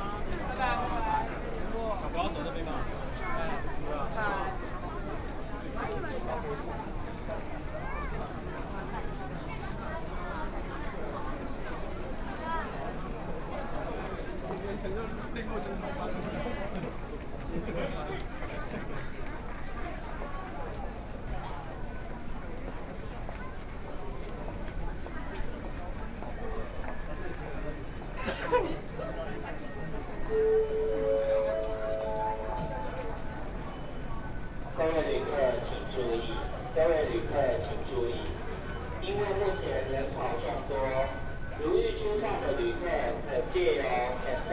Taipei Main Station, Taiwan - Crowds
Very many people at the station, Very many people ready to participate in the protest
Zhongzheng District, Taipei City, Taiwan, 30 March, ~15:00